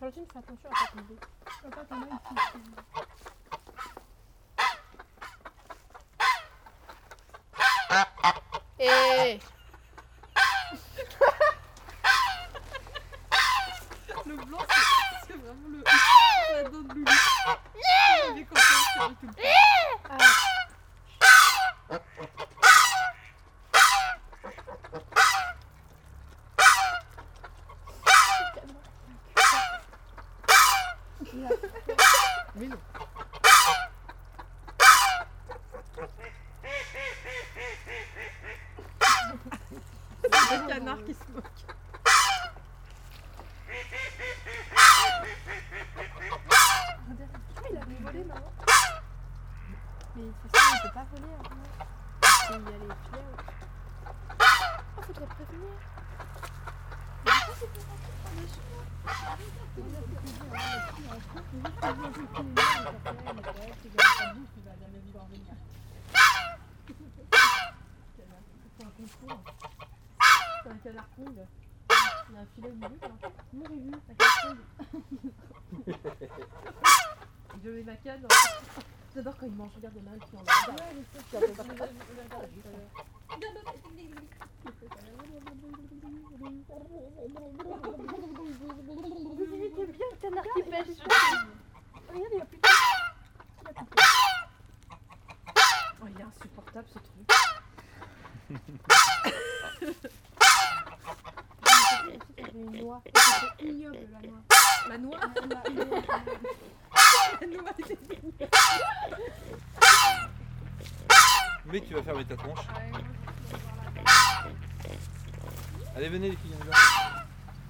Geese are shouting when seeing us. This makes children scream too. The white goose is particularly painful ! Yeerk !
Nogent-le-Roi, France